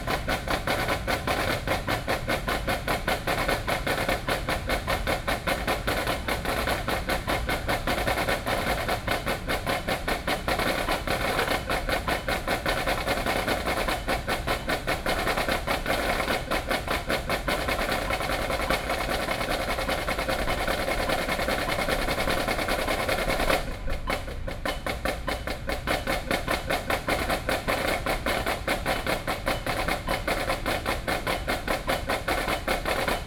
National Chiang Kai-shek Memorial Hall, Taipei - percussion instrument
Students are practicing percussion instrument, Sony PCM D50 + Soundman OKM II
台北市 (Taipei City), 中華民國, 3 May 2013